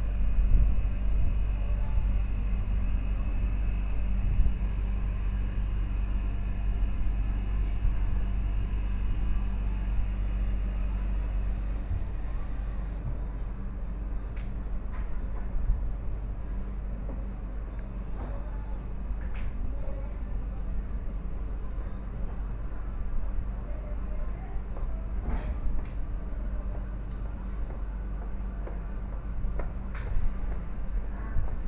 {
  "title": "Coimbra, Rua do Corpo de Deus",
  "date": "2010-07-30 12:36:00",
  "description": "a seamstress working machine listening to the radio",
  "latitude": "40.21",
  "longitude": "-8.43",
  "timezone": "Europe/Berlin"
}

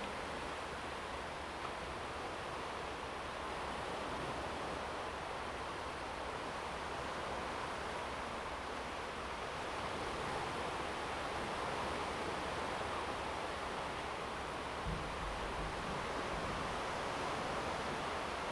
{
  "title": "杉福生態園區, Hsiao Liouciou Island - the waves",
  "date": "2014-11-01 11:27:00",
  "description": "Waterfront Park, sound of the waves\nZoom H2n MS +XY",
  "latitude": "22.34",
  "longitude": "120.36",
  "altitude": "3",
  "timezone": "Asia/Taipei"
}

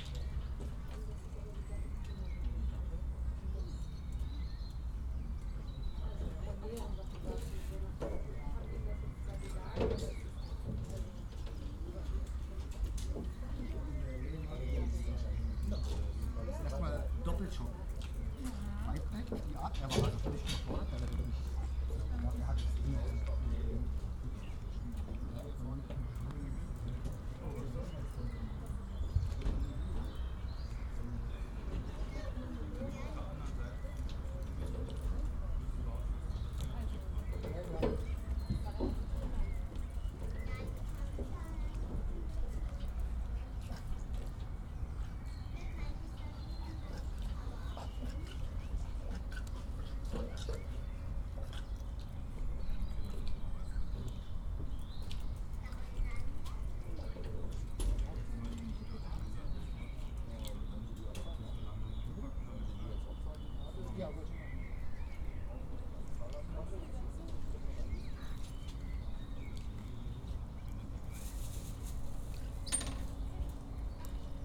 {
  "title": "Plänterwald, Berlin - BVG ferry boat, jetty, people waiting",
  "date": "2012-04-22 15:40:00",
  "description": "people waiting at jetty, for public transport ferry boat over river Spree.\n(tech note: SD702 DPA4060)",
  "latitude": "52.47",
  "longitude": "13.49",
  "altitude": "31",
  "timezone": "Europe/Berlin"
}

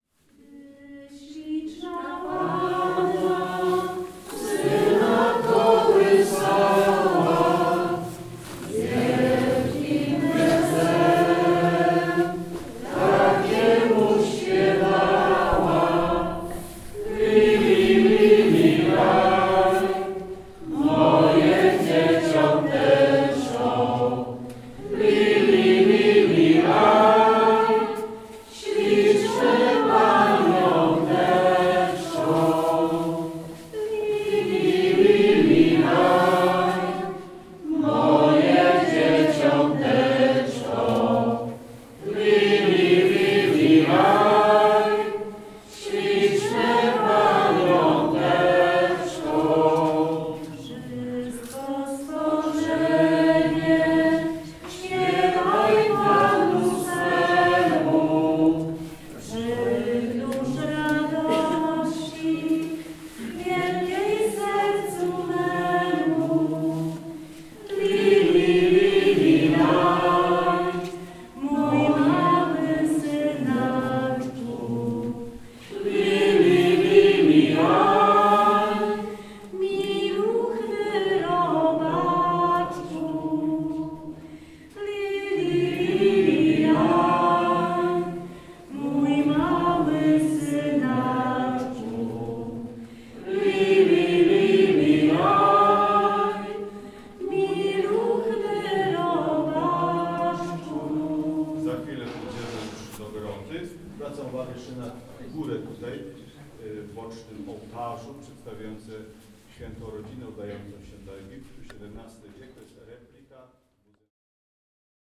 {
  "title": "Milk Grotto Church, Bethlehem - Chants of Polish Pelgrims",
  "date": "2014-01-29 15:43:00",
  "description": "Chants of Polish Pelgrims. (Recorded with Zoom4HN)",
  "latitude": "31.70",
  "longitude": "35.21",
  "altitude": "762",
  "timezone": "Asia/Hebron"
}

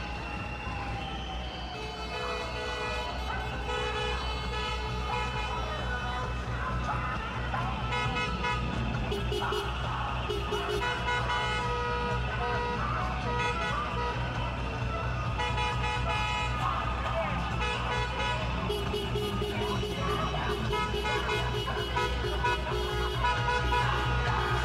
{
  "title": "Bd Baudouin, Bruxelles, Belgique - European demonstration of Taxi drivers against Uber",
  "date": "2022-09-08 12:00:00",
  "description": "Horns, klaxons.\nTech Note : Sony PCM-M10 internal microphones.",
  "latitude": "50.86",
  "longitude": "4.36",
  "altitude": "24",
  "timezone": "Europe/Brussels"
}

